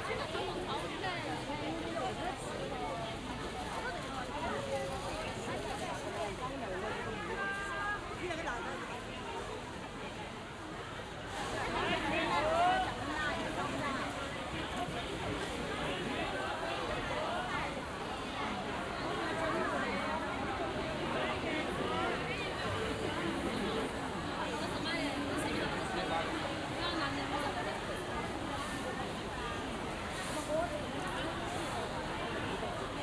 Soundwalk on market street
Binaural Olympus LS-100